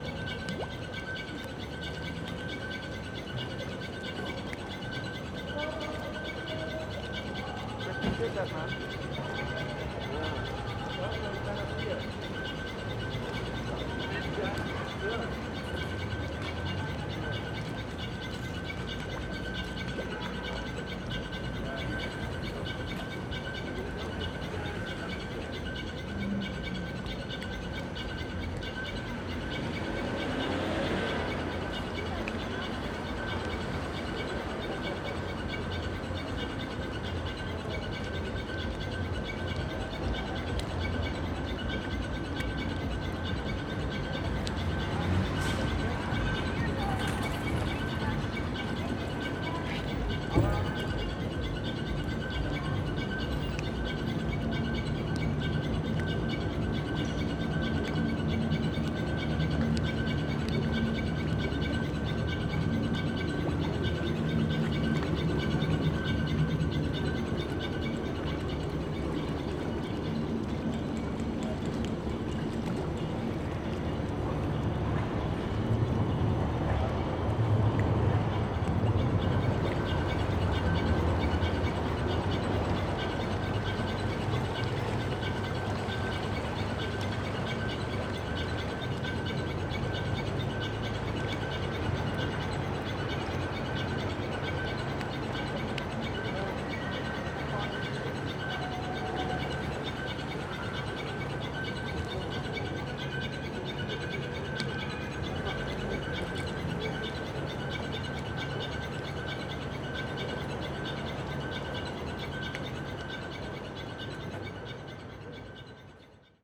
Grachtengordel-Zuid, Amsterdam, Niederlande - Herengracht Utrechtsestraat, Amsterdam - Amsterdam Light Festival.
Herengracht Utrechtsestraat, Amsterdam - Amsterdam Light Festival.
[Hi-MD-recorder Sony MZ-NH900, Beyerdynamic MCE 82]